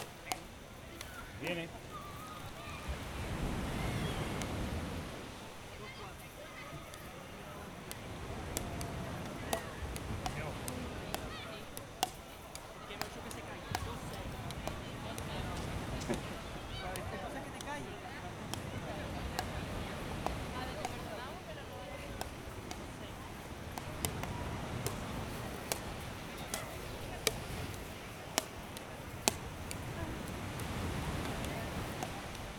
Nerja - Espagne
Jeu de plage - Ambiance
ZOOM H6
Andalucía, España